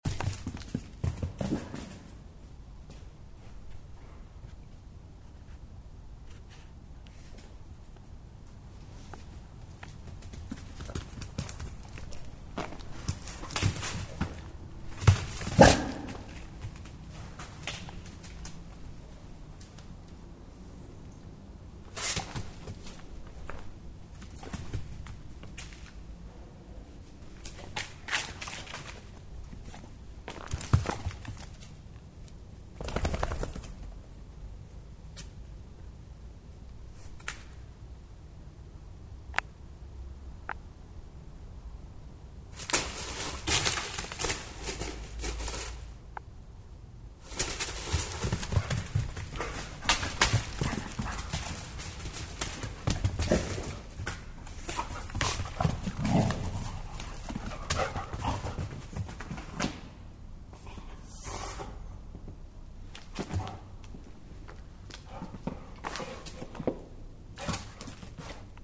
Athens, Greece-Velvendou - Afrodite looking for cats in the middleof the night...!!
Recorded with a Roland R-05.
Without an external micro.